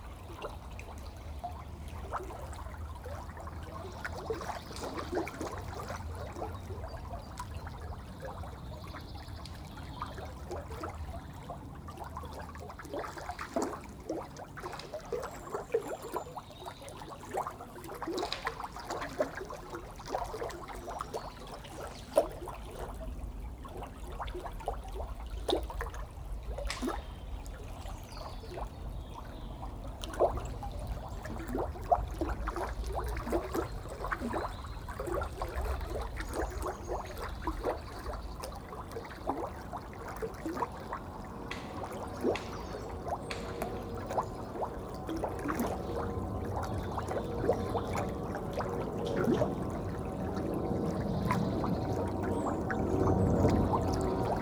Elisabethweg, Berlin, Germany - Fast flowing river Panke, gloops, three planes and a water sprinkler
The sound of the wealthy back gardens of Pankow.
20 April 2019